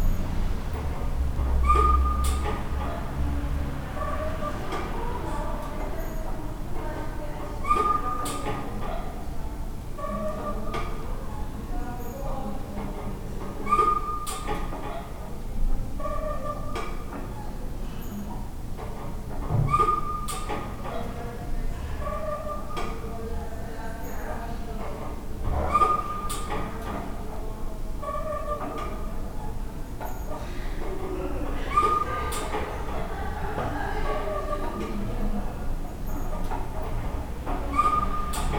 Kochanowskiego, Poznań - gas meter
regular whine and tapping of a gas meter on a staircase of an old apartment building. muffled conversations from behind the door. bit of traffic from the front of the building. (roland r-07)